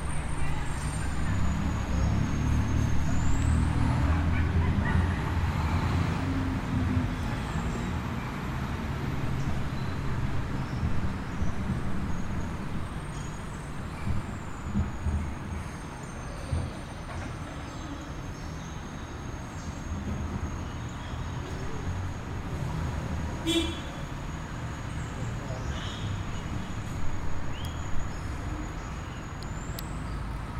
Ambiente grabado en rodaje de cortometraje Aviones de Papel. Locación: parque la castellana.
Sonido tónico: vehículos transitando, aves cantando.
Señal sonora: voces.
Equipo: Luis Miguel Cartagena Blandón, María Alejandra Flórez Espinosa, Maria Alejandra Giraldo Pareja, Santiago Madera Villegas, Mariantonia Mejía Restrepo.
Antioquia, Colombia, 3 October 2021